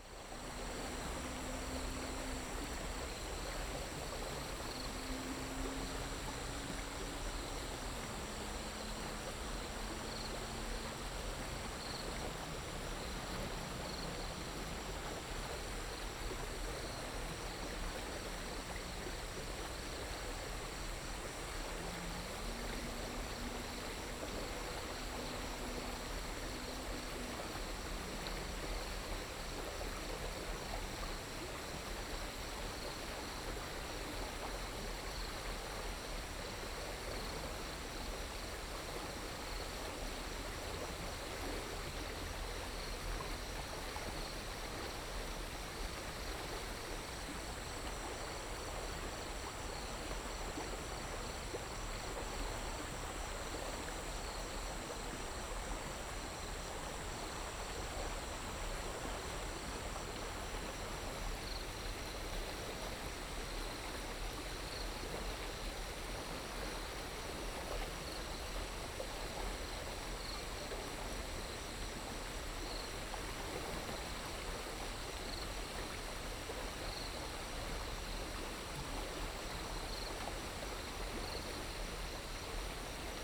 田份橋, 埔里鎮桃米里, Taiwan - the Bridge
Bridge, Sound of water, Insects sounds